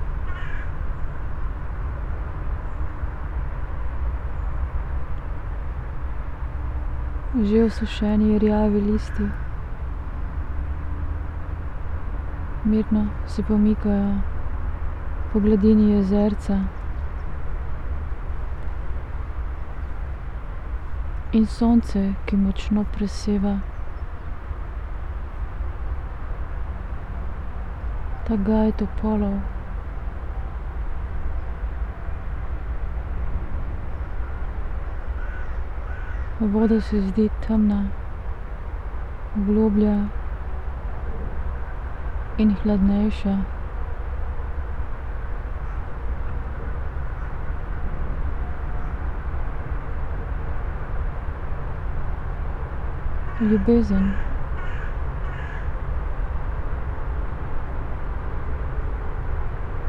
brittle pier, Melje, river Drava areas, Maribor - words, crows and yellow poplar leaves on still water